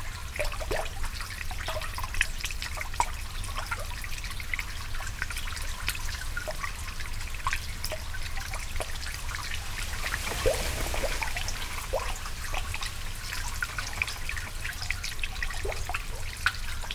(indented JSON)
{
  "title": "Westwood Marsh, United Kingdom - Melodic drips with reeds above and below water",
  "date": "2020-07-15 16:49:00",
  "description": "Recorded 3 days later in exactly the same spot with the same normal and underwater mics, but with no wind. The drips are much more active and there is much less bass from the underwater mic than in the recording with strong wind. There is a small sluice at this place. The higher level water on one side easing over the barrier causes the drips whose sound is also audible under the surface.",
  "latitude": "52.30",
  "longitude": "1.65",
  "altitude": "1",
  "timezone": "Europe/London"
}